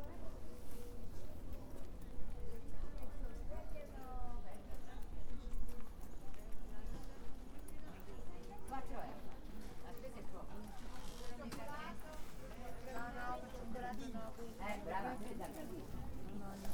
Via Federigi 55047 Querceta (LU) - Italy - A walk at the fair - Fiera di S.Giuseppe, Querceta.
A walk throug the local annual St.Joseph fair; St. Joseph is the patron saint of Querceta. Italian vendors singing, chinese vendors chatting, north african passers-by